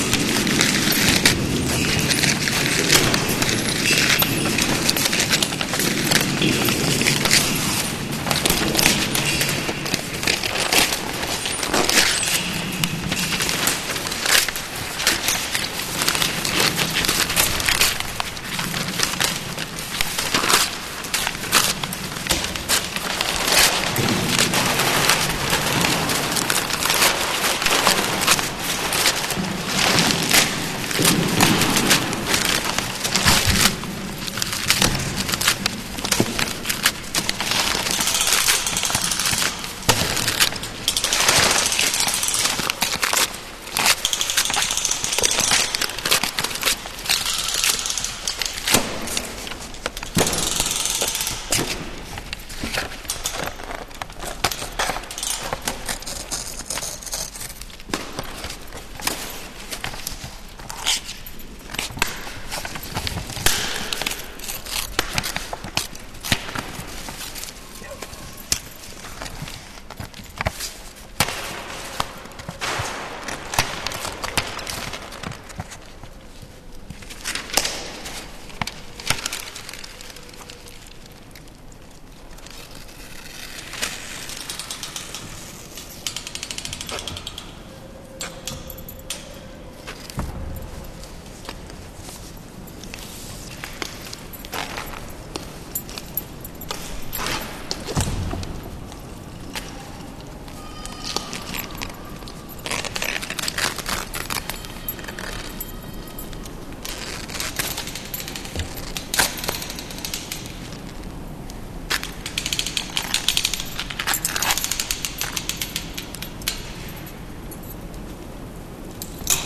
FAVU, údolní, Brno, Česká republika - DESTRUKCE - studentský workshop
záznam z dílny na FAMU o konstruktivní destrukci. verze 1.